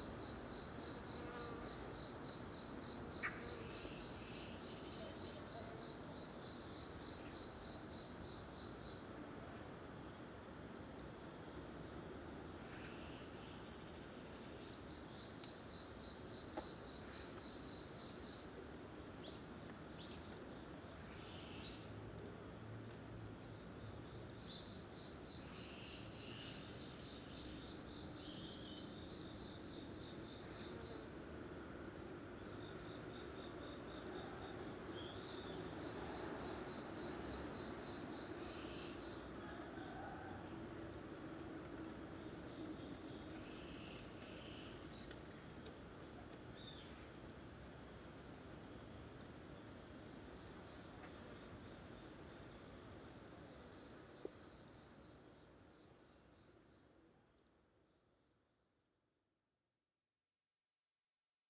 대한민국 서울특별시 방배5주택재건축구역 - Bangbae 5th Deconstruction Zone
Bangbae 5th Deconstruction Zone, Magpie
방배5주택재건축구역, 낮